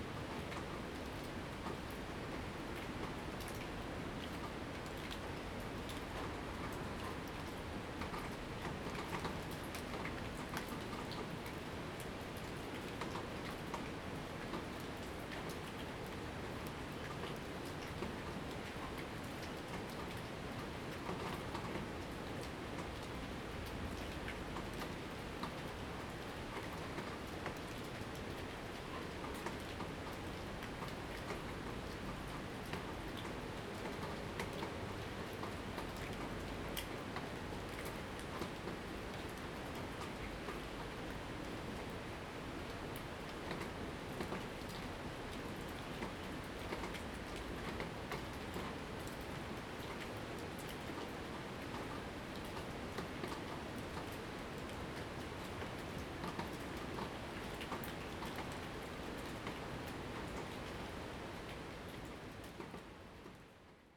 貨櫃屋辦公室, 埔里鎮桃米里 - Dogs barking
Dogs barking, raindrop
Zoom H2n MS+XY